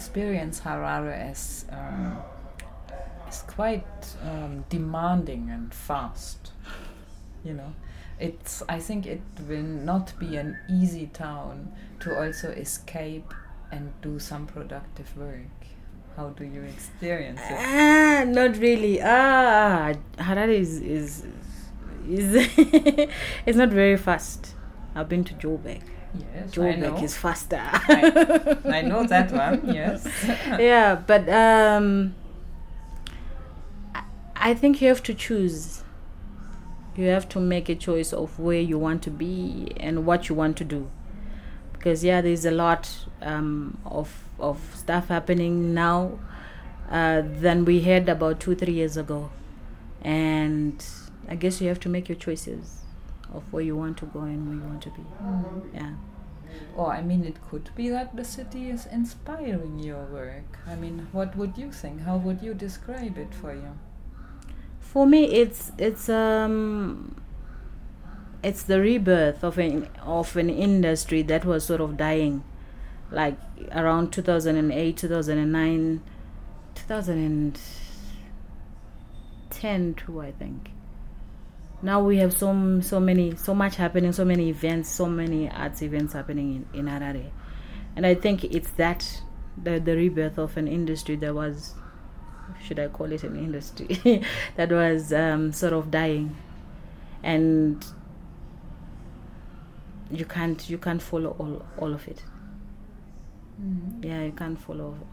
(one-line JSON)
{"title": "Harare South, Harare, Zimbabwe - Batsirai Chigama - inspiration could be a word...", "date": "2012-08-29 17:07:00", "description": "We are with the poet Batsirai Chigama in the Book Café Harare where she works as a gender officer and project coordinator for the FLAME project. It’s late afternoon, and you’ll hear the muffled sounds of the rush-hour city, and people’s voices roaming through Book Café...What has been Batsi’s way into writing and performing, and how does she see her role as a women and poet in her country? Is the city an inspiration in her work…? In this part of our conversation, Batsi takes us to the very beginnings of her career as a performance poet….\nThe complete interview with Batsi is archived here:", "latitude": "-17.83", "longitude": "31.06", "altitude": "1489", "timezone": "Africa/Harare"}